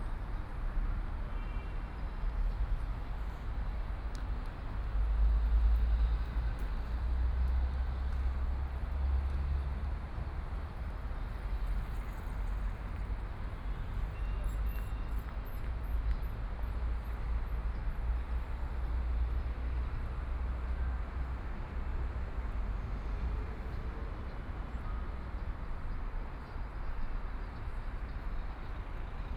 四平路, Shanghai - walking in the Street
walking in the Street, traffic sound, Binaural recording, Zoom H6+ Soundman OKM II